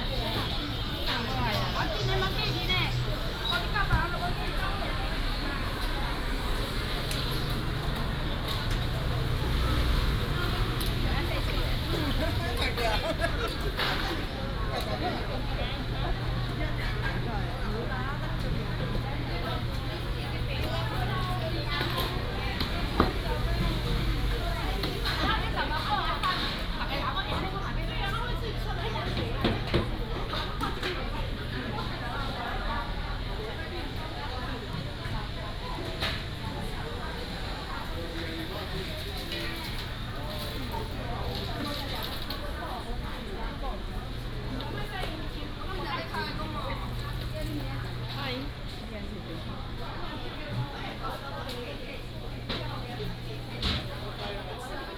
Old market, Sellers selling sound
North District, Tainan City, Taiwan, 18 February 2017, 10:49